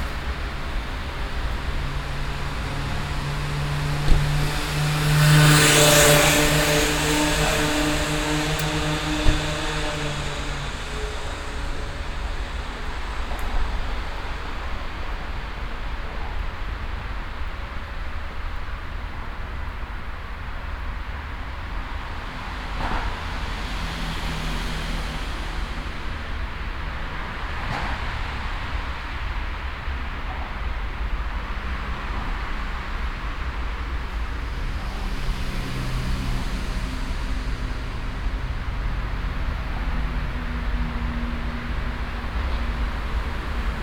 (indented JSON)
{
  "title": "cologne, im sionstal, nearbye bridge",
  "date": "2009-06-25 13:30:00",
  "description": "soundmap nrw: social ambiences/ listen to the people in & outdoor topographic field recordings",
  "latitude": "50.93",
  "longitude": "6.96",
  "altitude": "52",
  "timezone": "Europe/Berlin"
}